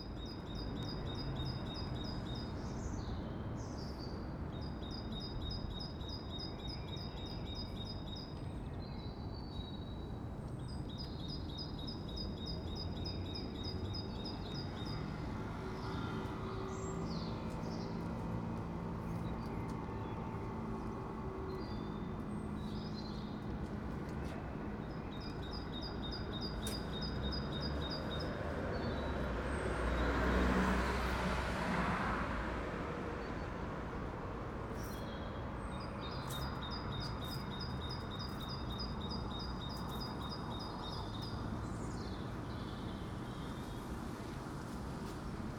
Eckenheim, Frankfurt am Main, Deutschland - Morning Ambience with birds
Recording of the morning ambience at my trainstation. at this time of the year there are plenty of birds active in the morning. there is also the traffic in the background.
Frankfurt am Main, Germany, 23 March 2015